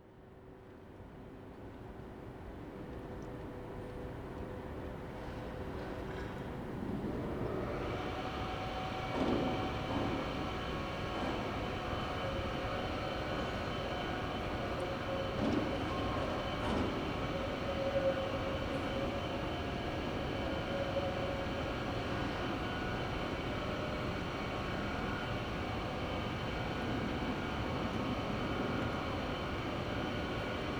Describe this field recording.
what you can hear early morning, from the window of the guest room of the Brno art house.